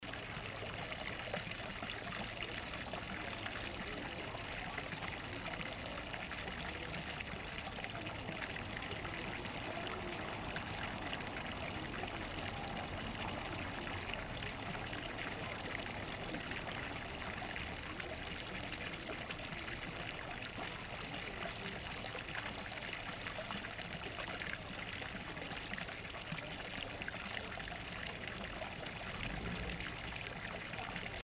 Mosebacke Torg, The Foutain 5m
The Fountain 5m at Mosebacke Torg for World Listening Day.